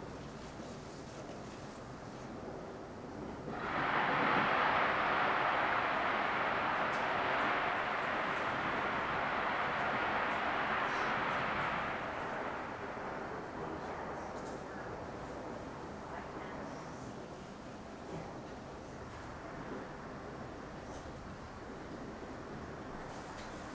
Fireworks are heard from around the Fuqun Gardens community, as midnight approaches. Through the windows, Thello can be heard complaining about having her surgical site cleaned. Recorded from the front porch. Stereo mics (Audiotalaia-Primo ECM 172), recorded via Olympus LS-10.
臺灣, 24 January 2020, 23:58